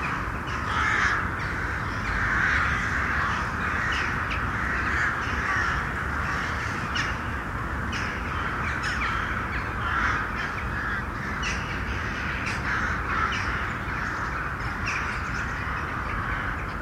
jackdaws and crows over Dresden Germany
17 January 2009, 23:16